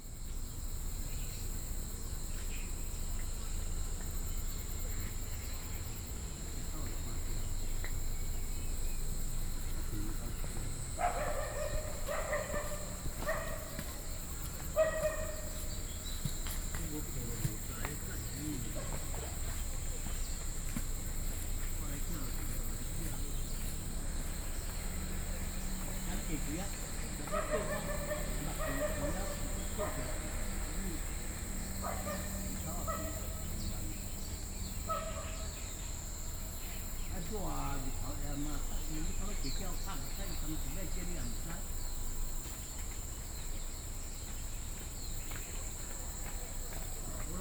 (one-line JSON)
{"title": "金龍湖, Xizhi Dist., New Taipei City - Dogs barking", "date": "2012-07-16 05:53:00", "description": "Early in the morning, At the lake, Dogs barking\nBinaural recordings, Sony PCM D50", "latitude": "25.07", "longitude": "121.63", "altitude": "21", "timezone": "Asia/Taipei"}